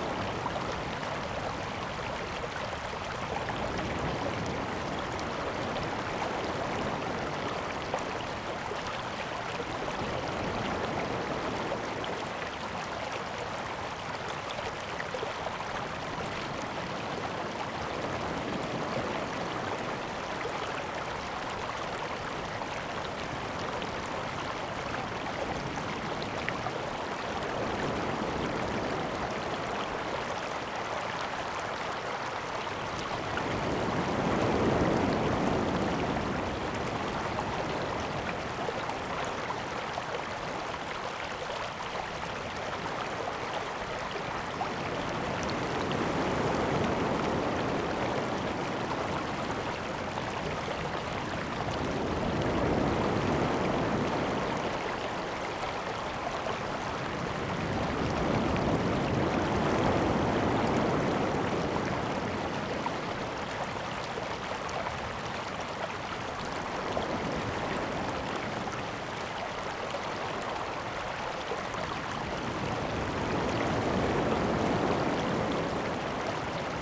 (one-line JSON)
{"title": "建農里, Taitung City - Streams and waves", "date": "2014-09-04 16:01:00", "description": "Streams and waves, The weather is very hot\nZoom H2n MS +XY", "latitude": "22.71", "longitude": "121.10", "altitude": "4", "timezone": "Asia/Taipei"}